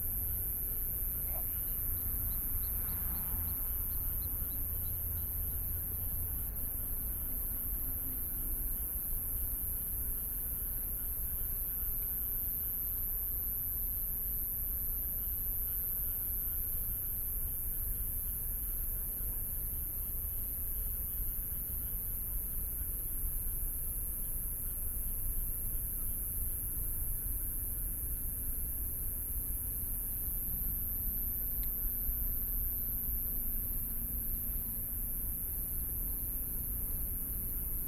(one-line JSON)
{"title": "北投區豐年里, Taipei City - Environmental sounds", "date": "2014-03-18 18:59:00", "description": "Traffic Sound, Environmental Noise\nBinaural recordings", "latitude": "25.13", "longitude": "121.49", "timezone": "Asia/Taipei"}